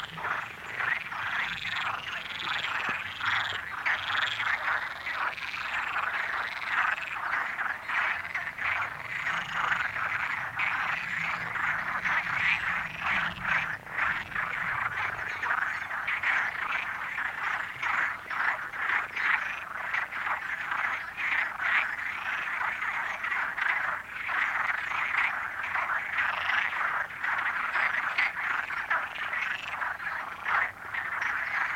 Frogs chatting in the lake at the start of the Mistérios Negros walk near Biscoitos.
Recorded on an H2n XY mics.
Açores, Portugal, 21 April